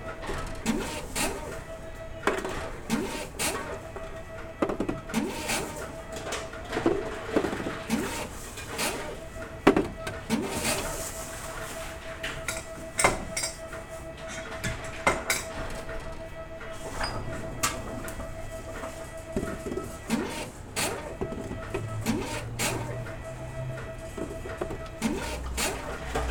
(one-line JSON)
{"title": "Sollefteå, Sverige - Deposit of beer cans and bottles", "date": "2012-07-18 19:41:00", "description": "On the World Listening Day of 2012 - 18th july 2012. From a soundwalk in Sollefteå, Sweden. Deposit of beer cans and bottles. Coop Konsum food shop in Sollefteå. WLD", "latitude": "63.17", "longitude": "17.28", "altitude": "24", "timezone": "Europe/Stockholm"}